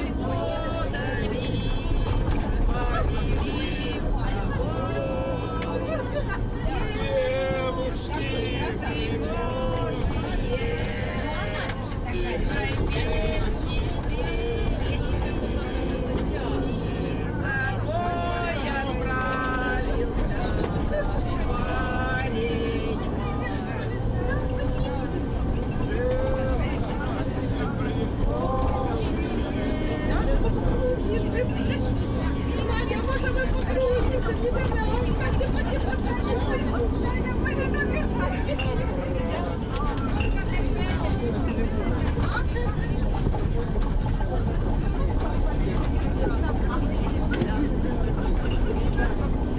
Донецька область, Украина - Беседы и пение в автобусе
Беседы в салоне и пение песен пассажирами